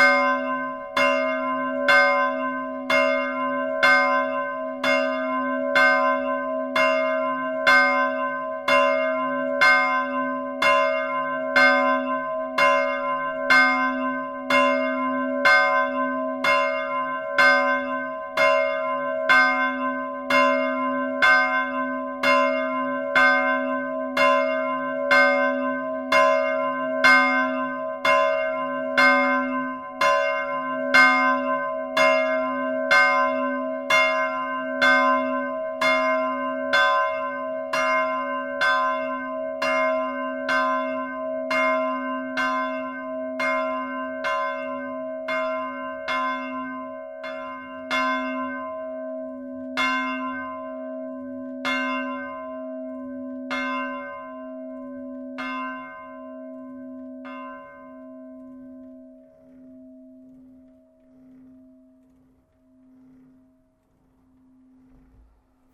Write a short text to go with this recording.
The Bonlez bell manually ringed in the tower. It's a very poor system and dirty place. This is not ringed frequently, unfortunately. This is the smallest bell, an old one. In first, as I begin a religious act, I ring an angelus.